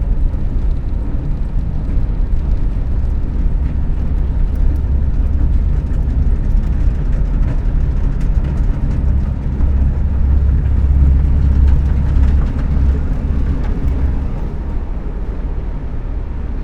Yerevan, Arménie - Cascade complex
Cascade park is an enormous artistic complex, posed on a hill. Inside, there's a huge collection of escalators, going to the top and making drone sounds. Built during the Soviet era, Cascade is big, very big ! That's why there's so much reverb inside the tunnel.